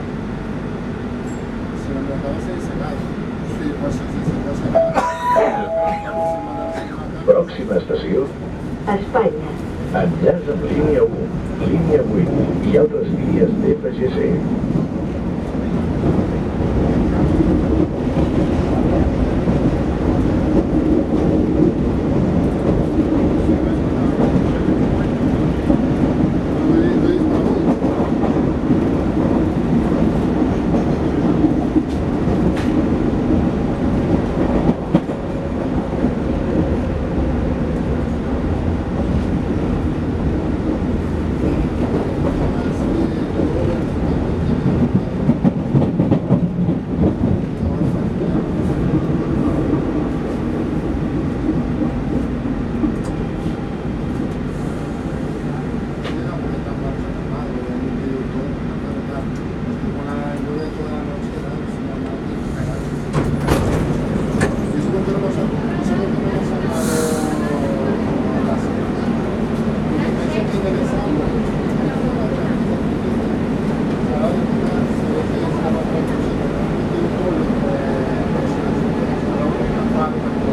Barcelona, Metrofahrt von Liceu nach Sants Estacio, 21.10.2009
Barcelona, Spain